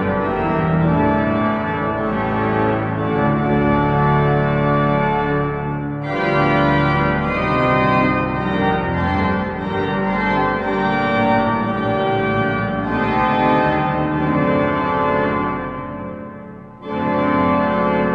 Rue des Papillons, Toulouse, France - Organ Music Church
Organ Music Church
The Basilica of Saint-Sernin (Occitan: Basilica de Sant Sarnin) is a church in Toulouse, France, the former abbey church of the Abbey of Saint-Sernin or St Saturnin. Apart from the church, none of the abbey buildings remain. The current church is located on the site of a previous basilica of the 4th century which contained the body of Saint Saturnin or Sernin, the first bishop of Toulouse in c. 250. Constructed in the Romanesque style between about 1080 and 1120, with construction continuing thereafter, Saint-Sernin is the largest remaining Romanesque building in Europe.[1][2][dubious – discuss] The church is particularly noted for the quality and quantity of its Romanesque sculpture. In 1998 the basilica was added to the UNESCO World Heritage Sites under the description: World Heritage Sites of the Routes of Santiago de Compostela in France.
France métropolitaine, France, April 2021